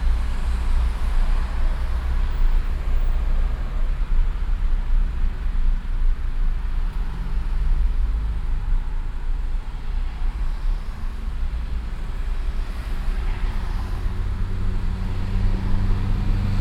cologne, autobahnabfahrt - innere kanalstrasse, im verkehr
abfahrt von der a 57 nach köln nord - stauverkehr vor der ampel - nachmittags - parallel stadtauswärts fahrende fahrzeuge - das quietschen eines zu schnellen pkw in der kurve - streckenaufnahme teil 02
soundmap nrw: social ambiences/ listen to the people - in & outdoor nearfield recordings